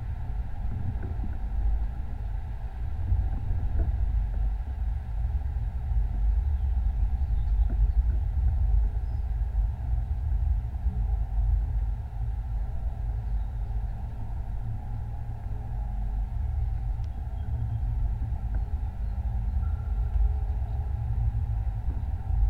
Tauragnai, Lithuania, rain pipe
Rain pipe on abandone building. contact microphones and geophone
Utenos apskritis, Lietuva, 13 June 2020